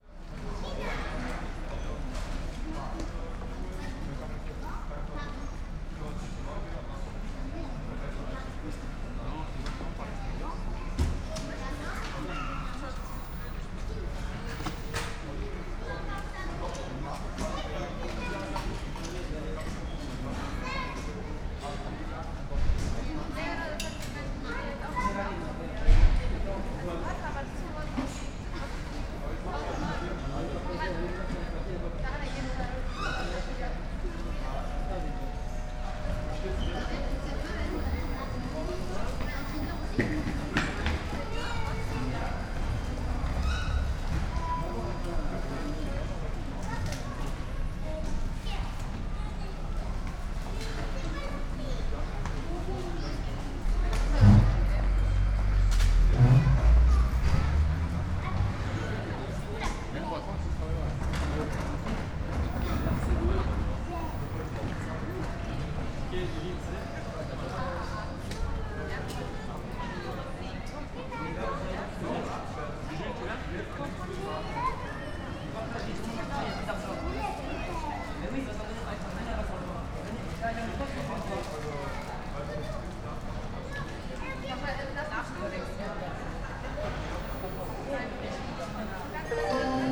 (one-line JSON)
{"title": "Gare d'Aix-en-Provence TGV, Aix-en-Provence, France - hall ambience", "date": "2014-01-11 08:00:00", "description": "Aix-en-Provence TGV station, hall ambience from a 1st floor platform", "latitude": "43.46", "longitude": "5.32", "altitude": "188", "timezone": "Europe/Paris"}